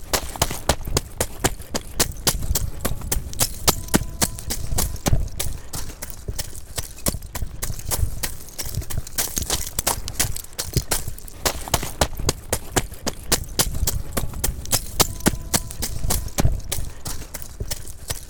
Saint-Nazaire, France - Course sur huîtres
22 September 2015, 18:40